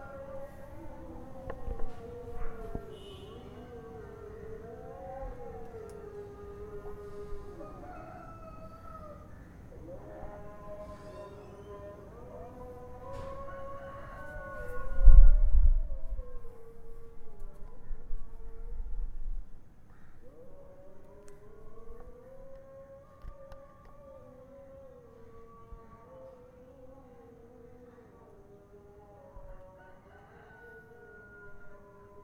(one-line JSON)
{"title": "Mikocheni B, Dar es Salaam, Tanzania - Early morning from the roof of CEFA, suburban Dar es Salaam", "date": "2016-10-22 04:44:00", "description": "Just before sunrise, recorded with a zoom X4 from a rooftop. Nice local ambiances of the neighbourhood, with several muezzin singing in the distance, some roosters and the sounds of the streets making up around.", "latitude": "-6.75", "longitude": "39.24", "altitude": "10", "timezone": "Africa/Dar_es_Salaam"}